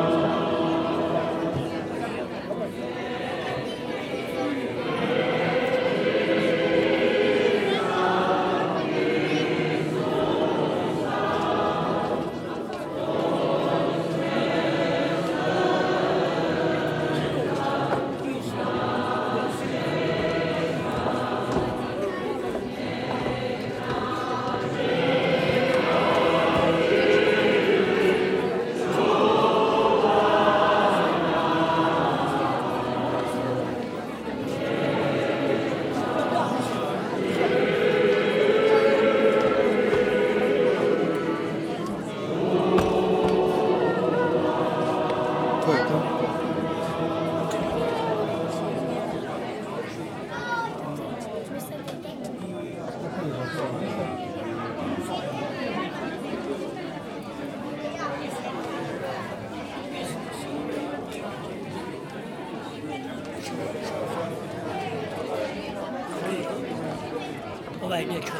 voices in front of the church, singing inside